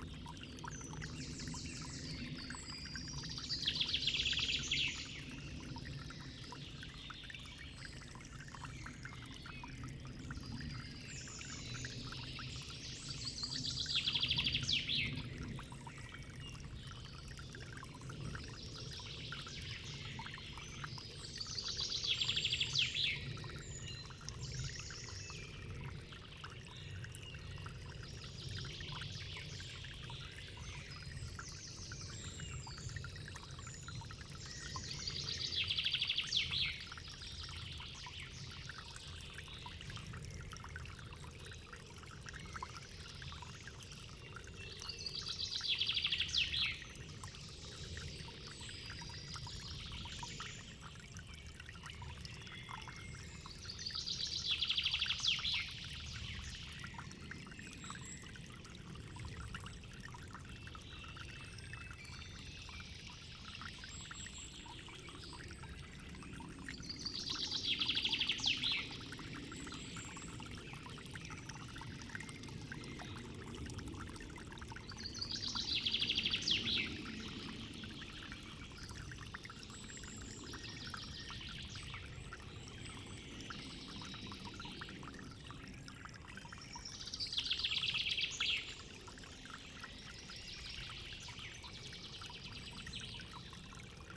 Beselich Niedertiefenbach, Ton - source of little creek
source of a little creek. unavoidable plane noise.
Germany